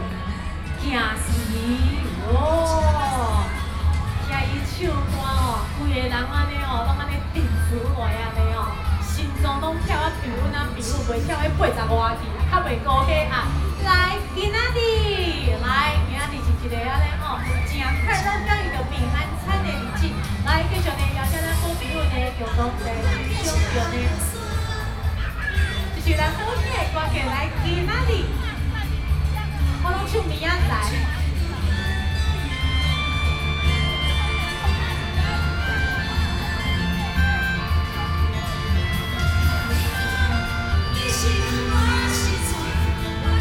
Beitou, Taipei City - Community party
Community party, Children playing in the park, Park next evening activities, Sony PCM D50 + Soundman OKM II
Beitou District, Taipei City, Taiwan, 2 September 2013